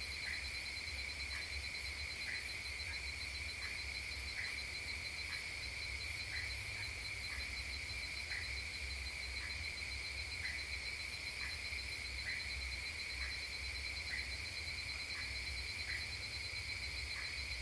Cl., La Mesa, Cundinamarca, Colombia - Dawn at La Mesa 4 AM
One of the characteristics of La Mesa (Cundinamarca) is that it is a place with many green areas that allow to host an infinity of insects and small animals that allow us to live sound experiences at night that make us feel as if we were in the middle of the Colombian fields. At night, the murmur generated by the song and the nocturnal activities of the insects allow a pleasant background of fundamental sounds to be had in the background. Adding to this, we find the sound of crickets contributing their share in the sound signals of the place. And to close with great originality, the singing of the frogs, accompanied by moments of the crowing of the rooster at dawn, comes, this to form a good sound mark.
Tape recorder: Olympus DIGITAL VOICE RECORDER WS-852